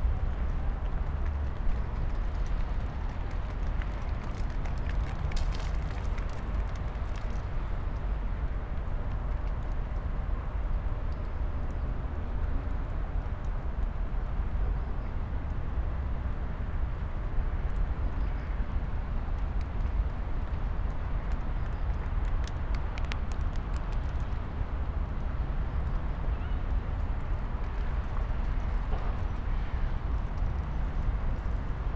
path of seasons, vineyard, piramida, maribor - ice chips
small parts of ice, crows, traffic hum
Slovenia, 5 February 2014